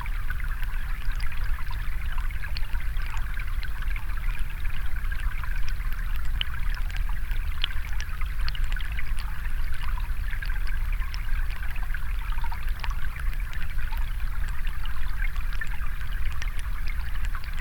there are several water springs in the valley, water just emerging from muddy soil. hydrophone sunken in the mud.
Utenos apskritis, Lietuva, 4 October 2020, 17:40